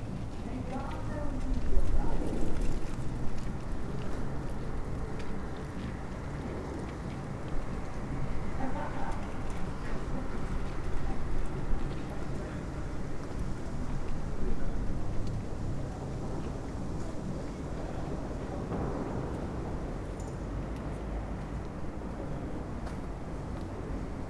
berlin: friedelstraße - the city, the country & me: night street ambience
windy night, dry leaves and small flags in the wind, music of a nearby party, passers by, taxis, bangers in the distance (in anticipation of new years eve?)
the city, the country & me: december 31, 2012
Berlin, Germany, 31 December 2012